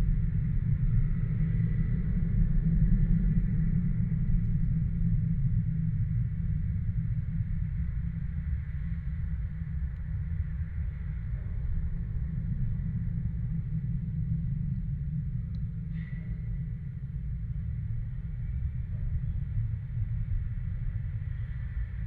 Utena, Lithuania, metallic fence
there's street repair works and metallic fences everywhere. contact microphones recording